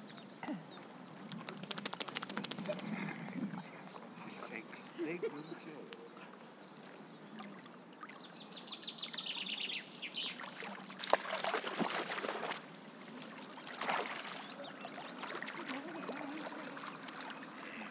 Walking Holme Dog Bath
A dog enjoying the water and a loving owner not wanting to get wet.
19 April 2011, 13:14